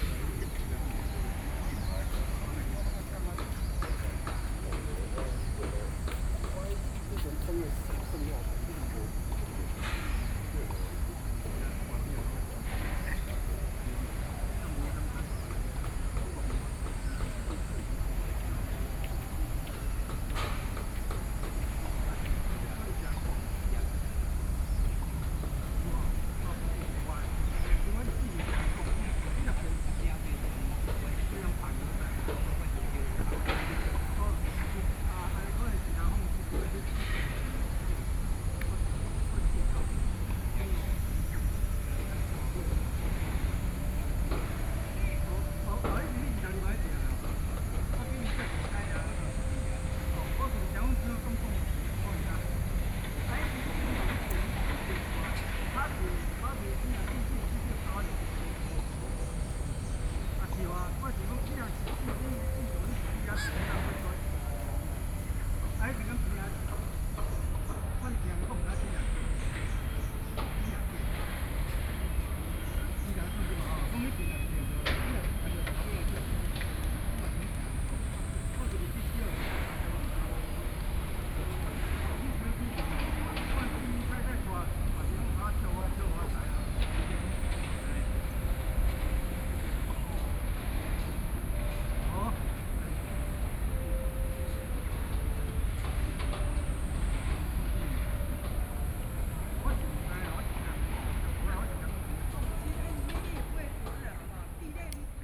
Bihu Park, Taipei City - in the Park
The distant sound of construction site
Taipei City, Taiwan, 9 July 2014, ~16:00